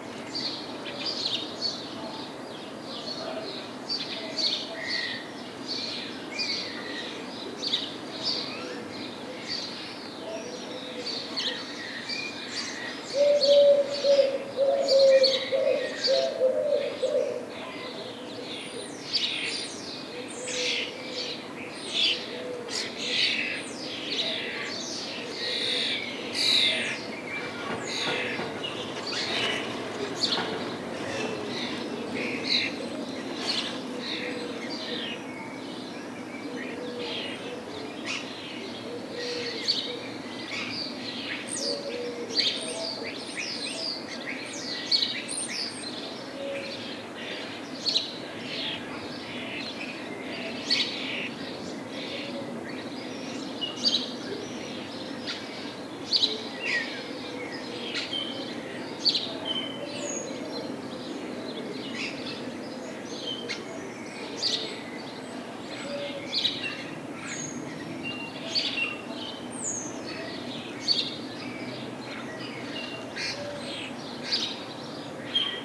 In the small center of Sainte-Marie-de-Ré, sparrows are singing and trying to seduce. The street is completely overwhelmed by their presence. During the recording, the bell, ringing 8:30 pm.